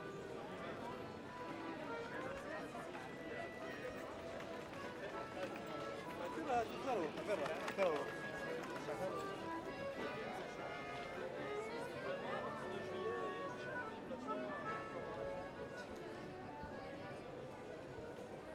Saint-Gilles, Belgium - The Accordion player in the market
The busker in the market street, playing accordion. Audio Technica BP4029 and FOSTEX FR-2LE.
Région de Bruxelles-Capitale - Brussels Hoofdstedelijk Gewest, België - Belgique - Belgien, European Union, 20 June